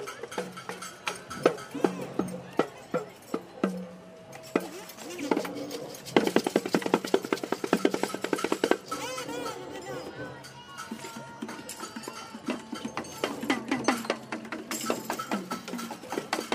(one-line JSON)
{"title": "Montreal: Place des Arts - Place des Arts", "date": "2008-07-05 15:23:00", "description": "equipment used: Nagra Ares MII\nChildrens entertainers, child musicians and drumming workshop at the Jazz Festival", "latitude": "45.51", "longitude": "-73.57", "altitude": "33", "timezone": "America/Montreal"}